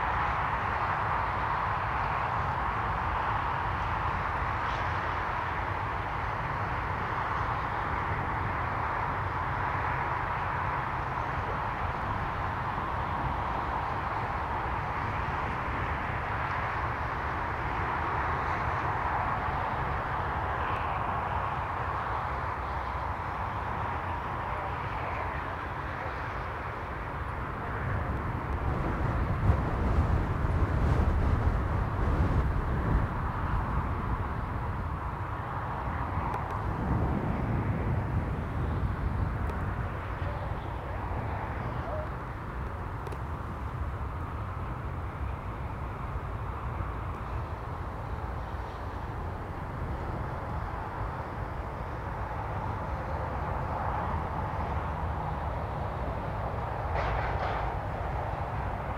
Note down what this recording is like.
The Drive Moor Crescent High Street Dukes Moor, Open grass, alternating sun, and wind driven snow squalls, the far hill disappears in the blizzard, Walkers throw a ball, for their enthusiastic dog